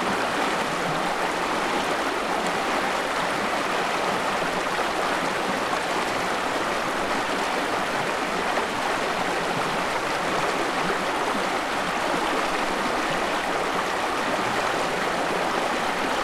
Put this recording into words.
weir at salvey creek, the city, the country & me: january 3, 2014